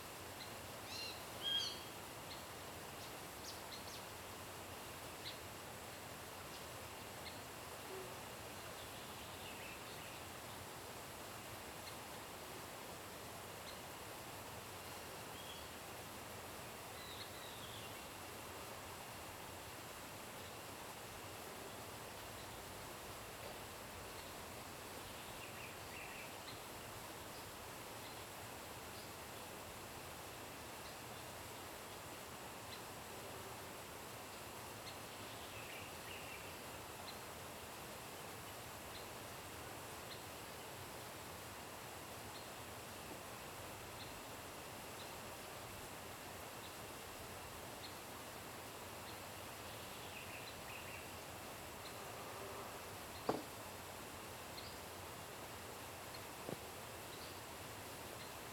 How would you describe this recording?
Birds, Sound of water, Zoom H2n MS+XY